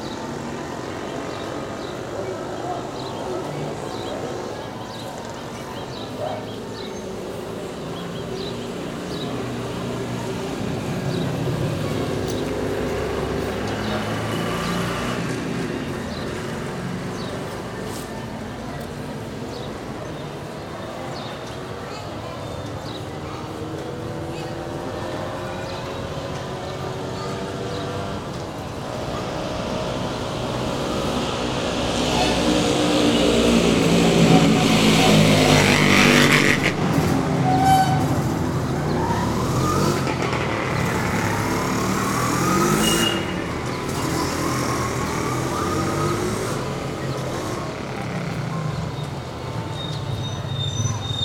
Santiago de Cuba, calle cerca del carpintero